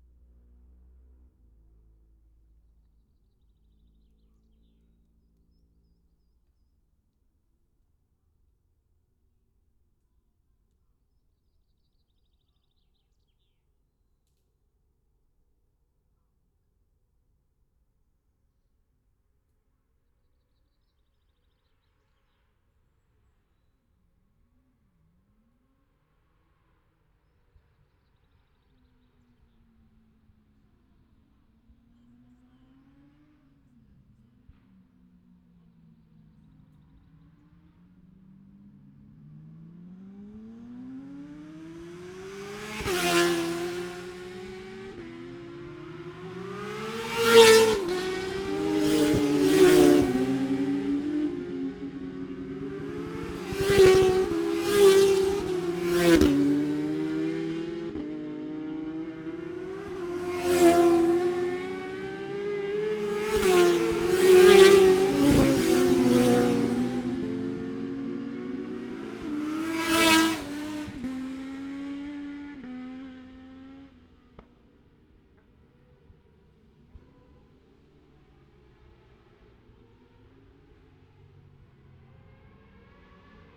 Scarborough, UK - motorcycle road racing 2017 ... 1000cc ...
1000cc practice ... odd numbers ... Bob Smith Spring Cup ... Olivers Mount ... Scarborough ... open lavaliers mics clipped to sandwich box ...